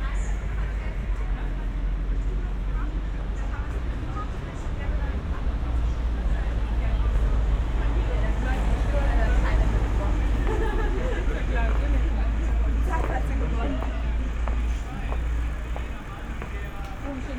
berlin: friedelstraße - the city, the country & me: night traffic
street nightlife during the european football championship
the city, the country & me: june 21, 2012